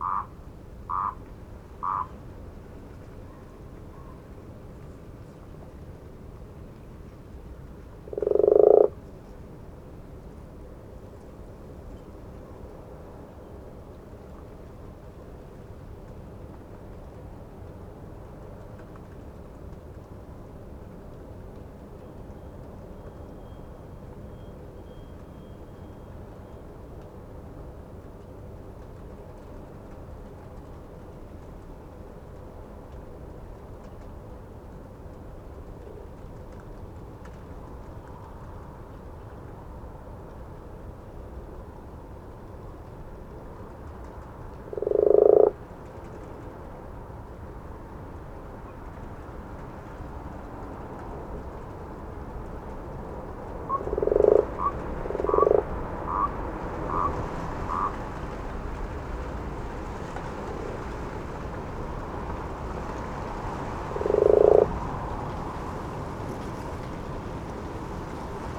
at My Garden Pond, Malvern, Worcestershire, UK - Pond
I have no idea what species the frogs or toads are. They arrive every year at this time and call for much of the day and night while remaining completely invisible. There is one call by a donkey from a farm about a mile away and a few distant owls from the wooded eastern side of the Malvern Hills. Thankfully it was a calm night with just a few gusts and hardly any cars. A wind chime is heard very faintly from somewhere in the street. I enjoy the distant jet planes.